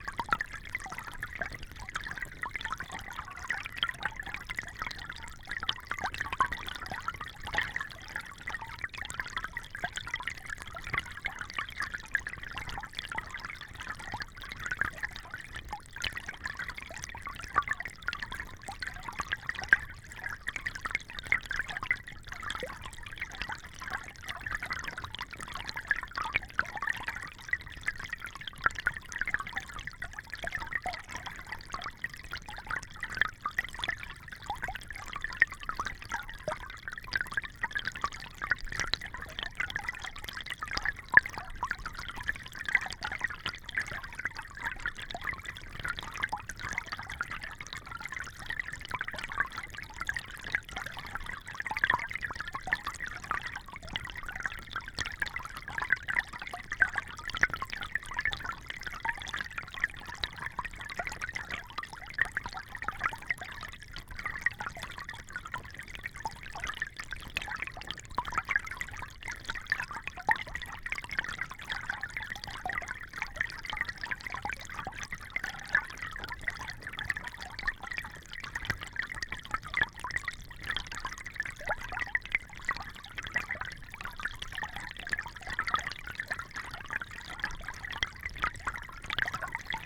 Indian Camp Creek, Foristell, Missouri, USA - Indian Camp Creek Hydrophone
A northern water snake curiously watched me make this hydrophone recording in Indian Camp Creek.